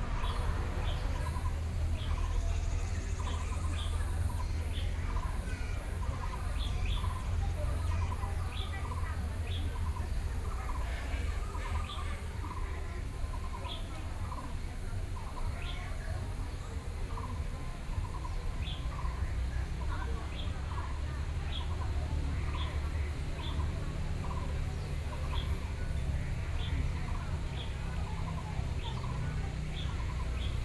Hutoushan Park - Birdsong
Birdsong, Sony PCM D50 + Soundman OKM II